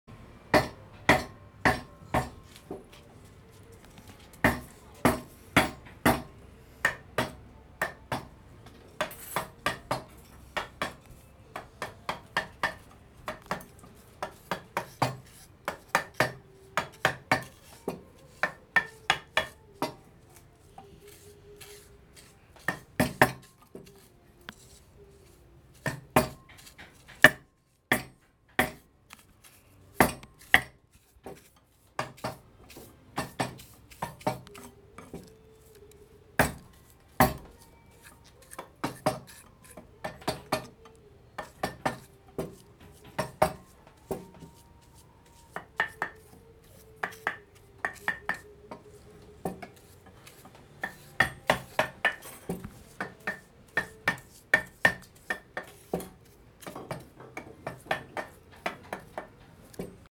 Young-CHUAN palanquin 永川大轎 - Wood scupturing
The traditional artisan using the graver to sculpture the delicate wood. 工藝師使用雕刻刀精細雕刻轎腳